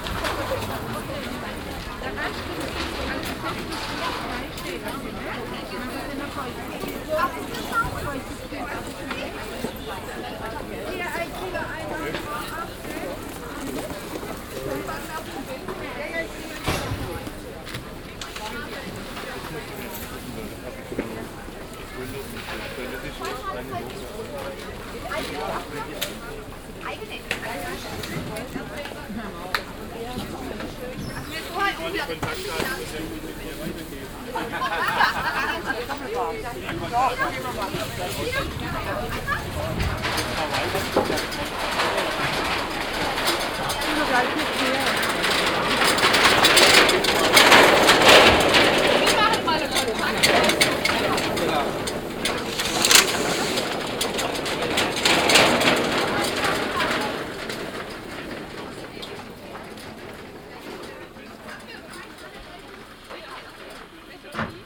stuttgart, marktplatz, market
weekly market on the central market place - listen to the german schwäbisch accent
soundmap d - social ambiences and topographic field recordings
Stuttgart, Germany